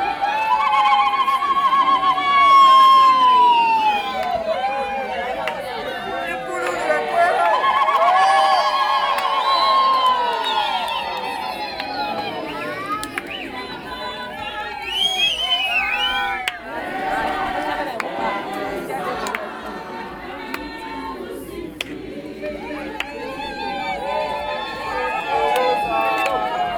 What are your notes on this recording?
… a praise poem performed by a woman poet, and a thanks-giving hymn by the whole community while a long line of guests is getting in place to offer their congratulations and gifts to the new couple; all this, framed by the announcements of the event’s master of ceremony…